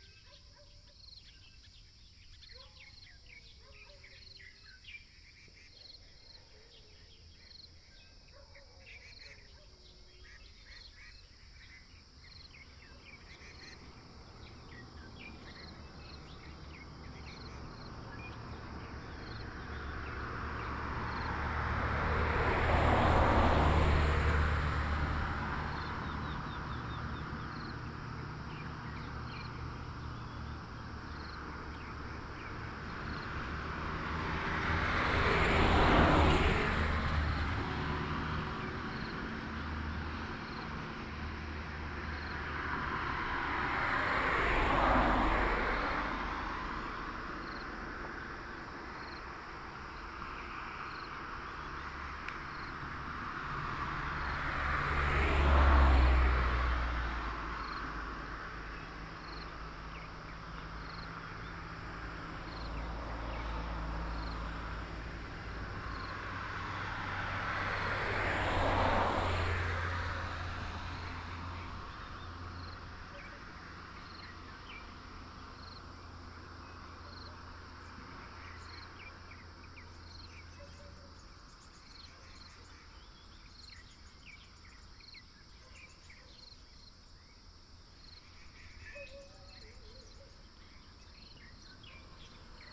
Early in the morning next to the road, Insects, Chicken cry, Facing the reservoir, Dog sounds, A variety of birds call, traffic sound, Binaural recordings, Sony PCM D100+ Soundman OKM II
Miaoli County, Taiwan, 19 September 2017